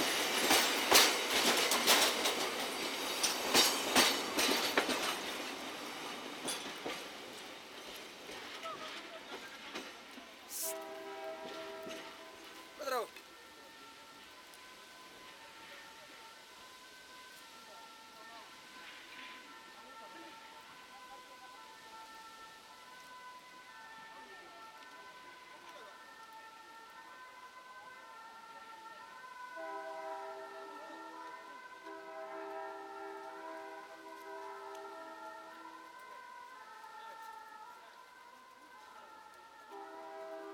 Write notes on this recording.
Bamako - Mali, Gare ferroviaire - ambiance sur le quai.